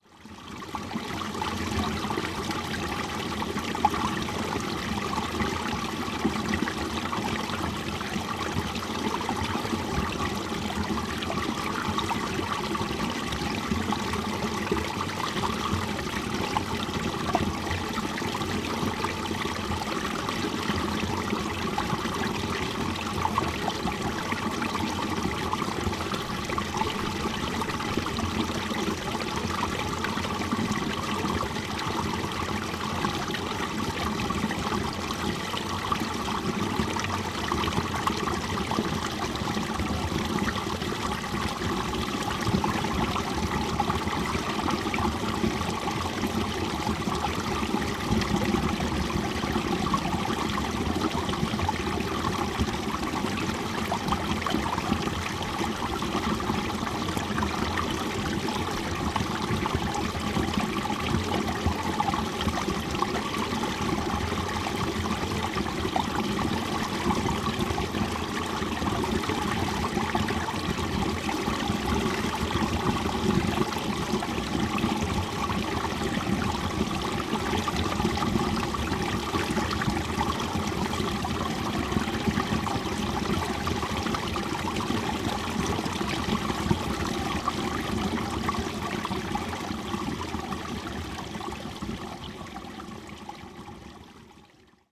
göhren, strandpromenade: wasserbecken - the city, the country & me: water basin, trick fountains
drain of a water basin
the city, the country & me: october 2, 2010
Göhren, Germany, 2 October, 15:31